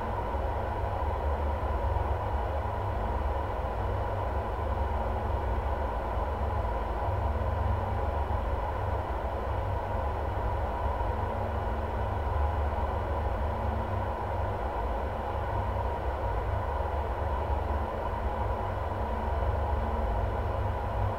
Kavarskas, Lithuania, fence near dam
contact microphones on a fence near dam